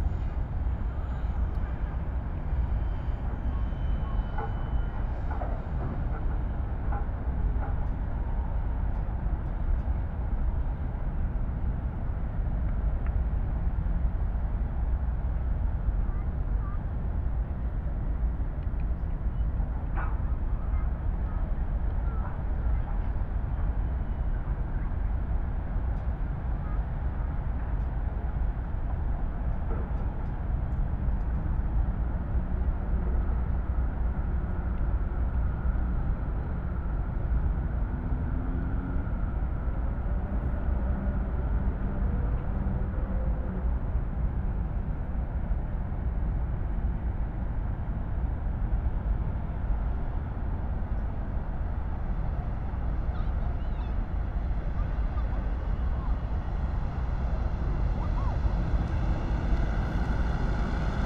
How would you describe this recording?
catching city drones and sonic energy on former tempelhof airport. all sounds coming from far, almost no direct sources, reflections from the building, very high gain levels. most of the permanent deep hum comes from the autobahn south of tempelhof, but the city itself has an audible sound too. (tech note: A-B 60cm NT1a, mic direction NW)